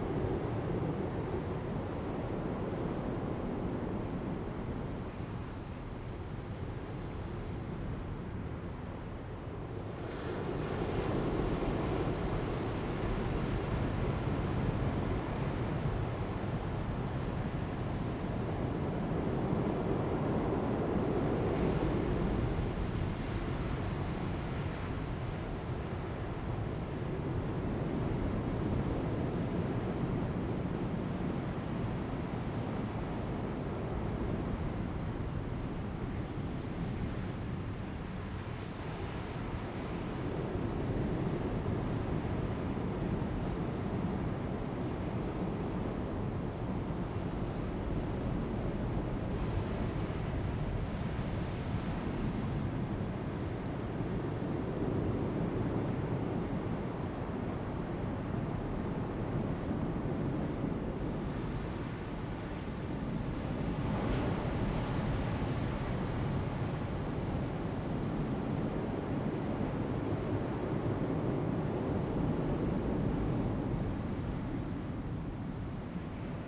南田海岸, 台東縣達仁鄉 - In the bush
At the beach, Sound of the waves, In the bush
Zoom H2n MS+XY
Daren Township, 台26線, 23 March 2018, ~12:00